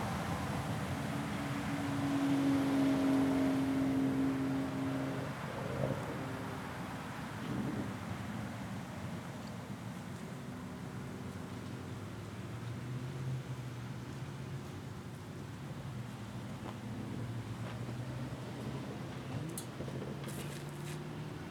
White Bear Lake City Hall - Outside City Hall
Ambient sounds outside of the White Bear Lake City Hall. Highway 61 traffic, people coming and going from city hall, and the clock tower chiming can all be heard.